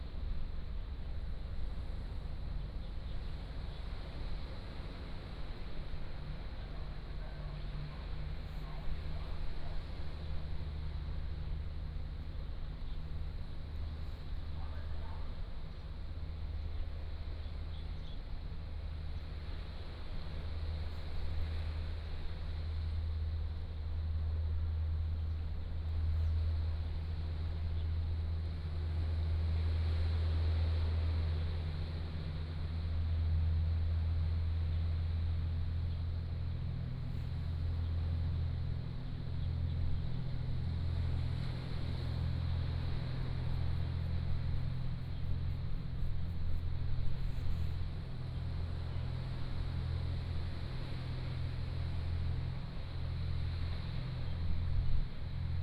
珠螺村, Nangan Township - On the coast
On the coast, Sound of the waves
連江縣, 福建省, Mainland - Taiwan Border